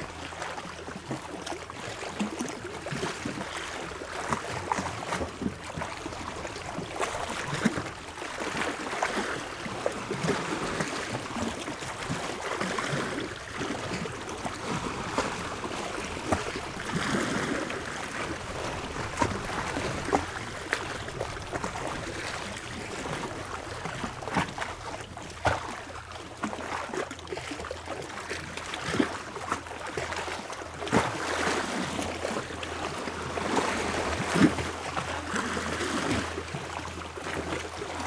Patmos, Liginou, Griechenland - Meeresstrand, Felsen 01
Patmos, Greece, 31 May